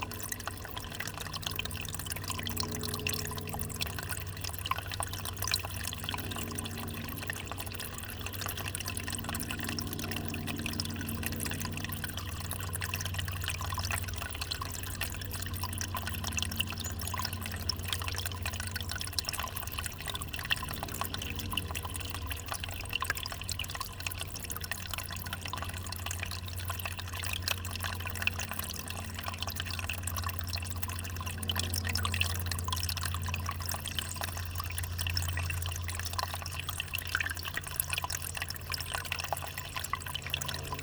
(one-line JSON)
{"title": "Genappe, Belgique - Spring", "date": "2017-04-09 14:40:00", "description": "A small spring flowing from the ground near the Ry d'Hez river.", "latitude": "50.58", "longitude": "4.49", "altitude": "128", "timezone": "Europe/Brussels"}